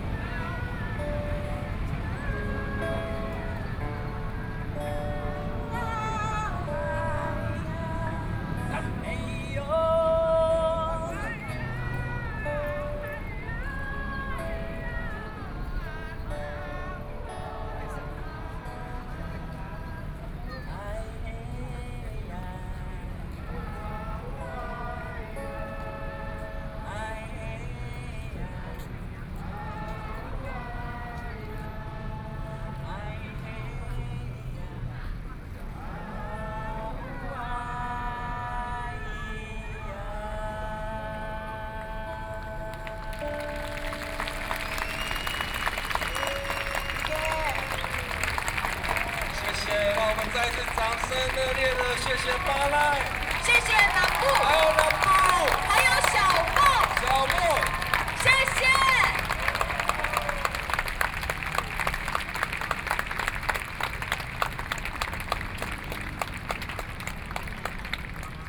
Taiwanese Aboriginal singers in music to oppose nuclear power plant, Sing along with the scene of the public, Aboriginal songs, Sony PCM D50 + Soundman OKM II
台北市 (Taipei City), 中華民國